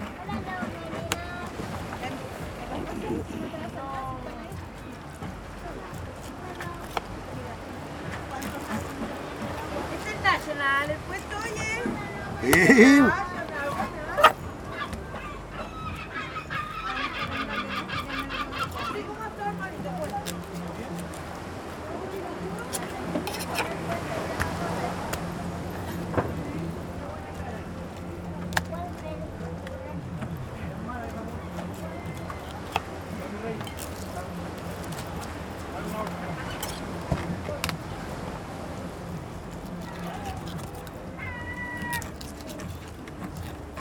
{"title": "Fish Market, Caleta Portales, Valparaiso (Chile) - Man cutting and cleaning fishes", "date": "2015-11-25 11:00:00", "description": "At the fish market of Caleta Portales (outside), some people are working to clean and cut the fresh fish.\nClose recording with some voices and sounds of the market in background, as well as the sea, waves and birds behind.\nRecorded by a MS Setup Schoeps CCM41+CCM8\nIn a Cinela Leonard Windscreen\nSound Devices 302 Mixer and Zoom H1 Recorder\nSound Reference: 151125ZOOM0015\nGPS: -33,0307 / -71,5896 (Caleta Portales)", "latitude": "-33.03", "longitude": "-71.59", "altitude": "9", "timezone": "America/Santiago"}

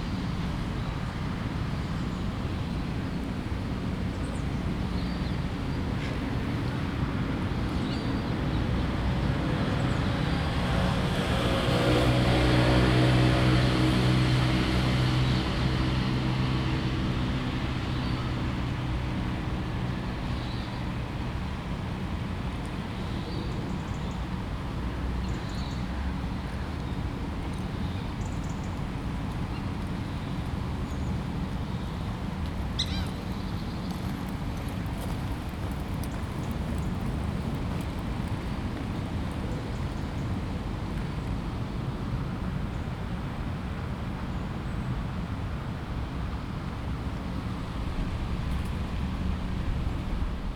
{"title": "St Jame's Park, London. - St James's Park Opposite Rear of Downing St", "date": "2017-07-21 07:10:00", "description": "Adjacent to the lake in St Jame's Park. There is a lovely bird squeak at 01:55 that sounds like a child's toy. Recorded on a Zoom H2n.", "latitude": "51.50", "longitude": "-0.13", "altitude": "15", "timezone": "Europe/London"}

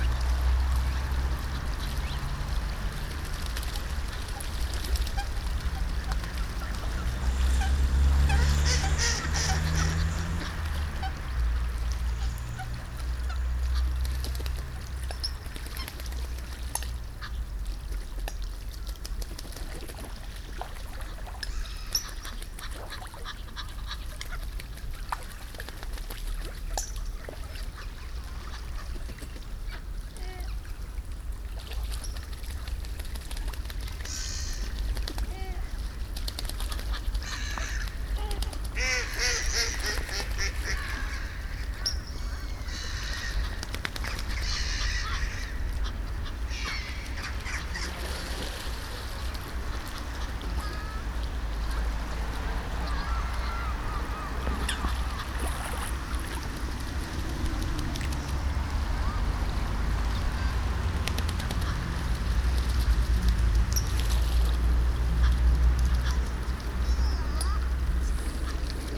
{"date": "2010-12-25 14:00:00", "description": "Birds bathing in the only ice-free spot in the Hofvijfer. Binaural recording.", "latitude": "52.08", "longitude": "4.31", "altitude": "6", "timezone": "Europe/Amsterdam"}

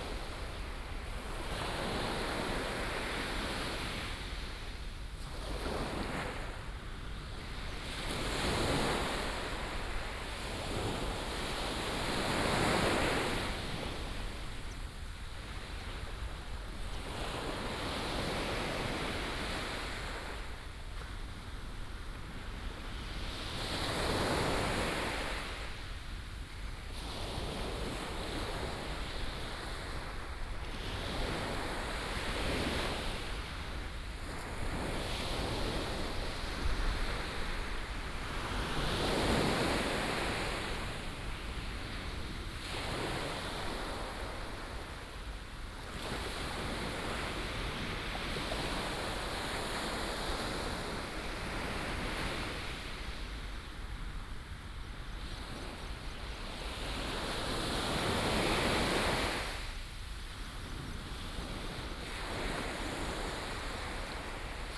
{"title": "Liukuaicuo, Tamsui Dist., New Taipei City - Sound of the waves", "date": "2016-04-16 06:34:00", "description": "At the beach, Sound of the waves", "latitude": "25.24", "longitude": "121.45", "altitude": "3", "timezone": "Asia/Taipei"}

